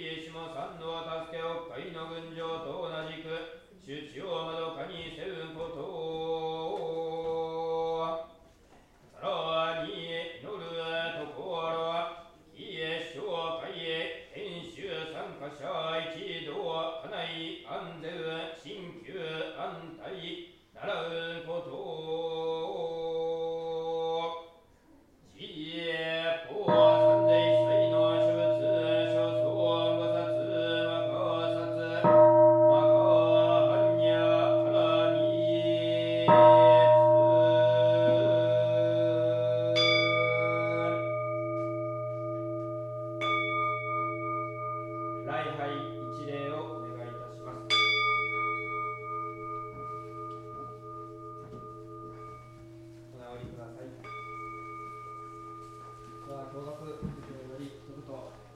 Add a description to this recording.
Chanting and bells, Zen Buddhism class, Ryuo-den hall, Kencho-Ji temple, in Kamakura town. Recorder LS-10